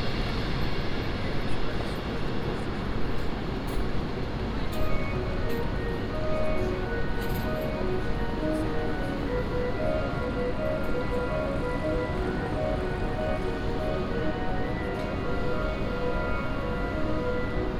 Gare de Flandres, Lille, Francja - (411) BI Railway station
Binaural recording of a Gare de Flandres on Sunday morning. Ultimate readymade - Duchamp would be proud.
Sony PCM-D100, Soundman OKM
Hauts-de-France, France métropolitaine, France, 18 November 2018, 12:00